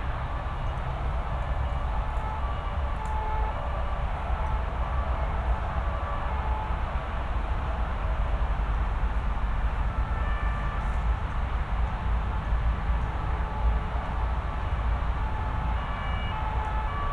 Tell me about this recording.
There are sounds of Toulouse's beltway, sirens, and train. It was taken during the preparation of the exhibition of #Creve Hivernale#, december 2016.